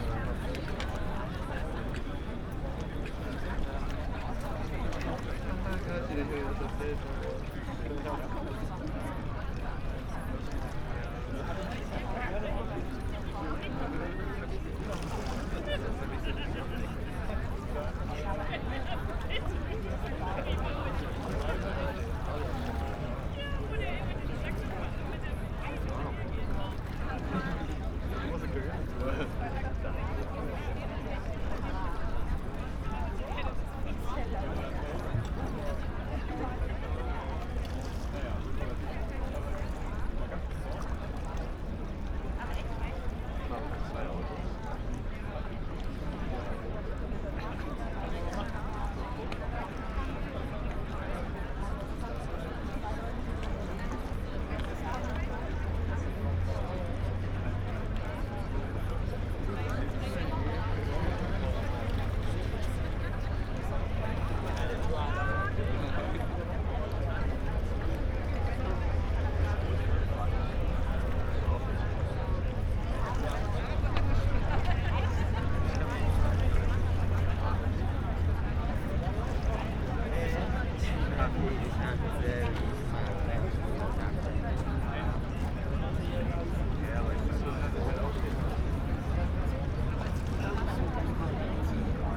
Rheinboulevard, Deutz, Köln - evening ambience at river Rhein
not yet finished Rheinboulevard, a large terrace alongside river Rhein, between Deutzer and Hohenzollern bridge. People enjoying sunset
(Sony PCM D50, Primo EM172)